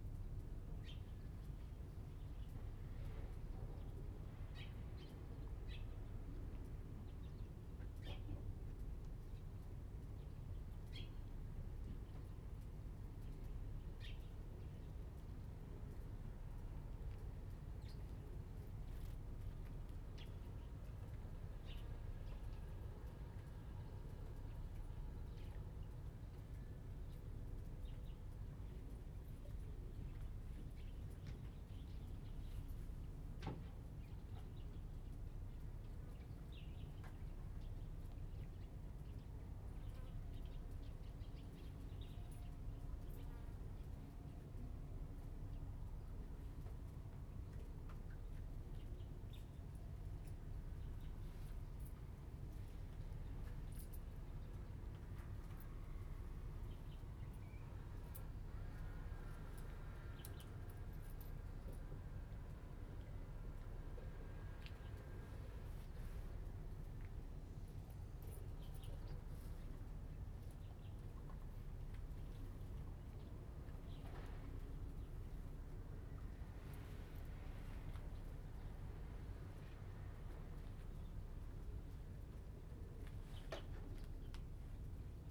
北寮漁港, Huxi Township - In the fishing port pier
In the fishing port pier, Birds singing, Small village
Zoom H2n MS +XY